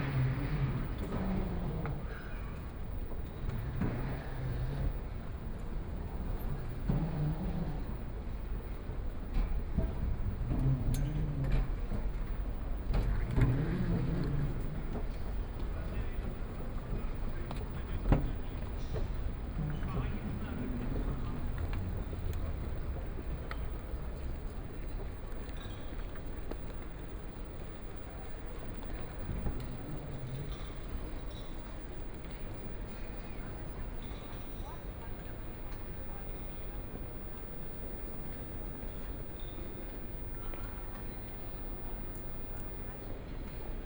München-Flughafen, Munich 德國 - soundwalk
From Square, Then go into the Airport Terminal, Walking in Airport Terminal
Munich, Germany, 11 May, ~20:00